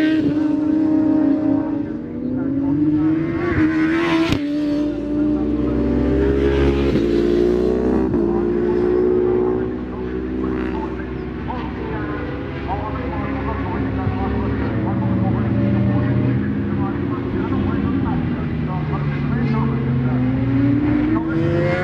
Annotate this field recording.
British Superbikes 2004 ... Qualifying ... part two ... Edwina's ... one point stereo to minidisk ...